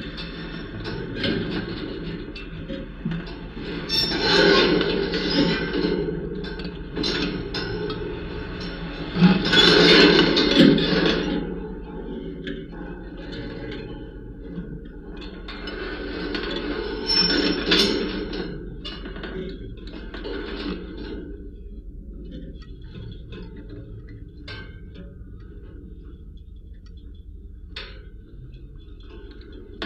Šv. Stepono g., Vilnius, Lithuania - Construction site wire fence
Dual contact microphone recording of a construction fence. Dynamic sounds - footsteps and cars resonating through the wires, as well as sudden gushes of wind rattling the fence. Recorded using ZOOM H5.